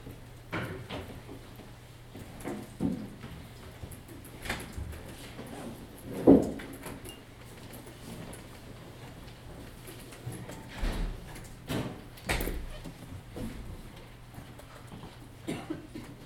Filozofická fakulta, Univerzita Karlova v Praze, nám. J. Palacha, Praha-Staré Město, Czechia - Jan Palach University Library
Knihovna Jana Palacha, Červená knihovna. Library noise, people studying, chatting, walking by, keyboard clicking.
Recorded with Zoom H2n, 2 channel stereo mode, HIGH GAIN.